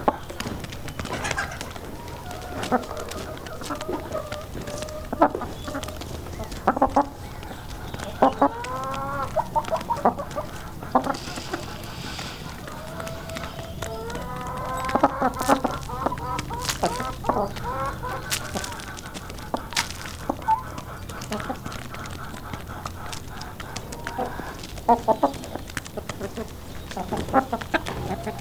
Chickens Chatting, Bredenbury, Herefordshire, UK - On The Farm
Recorded inside the chicken coop while the birds wander about pecking food and socialising. I used 2x Sennheiser MKH 8020s and a Mix Pre 6 II .
England, United Kingdom, 6 April 2019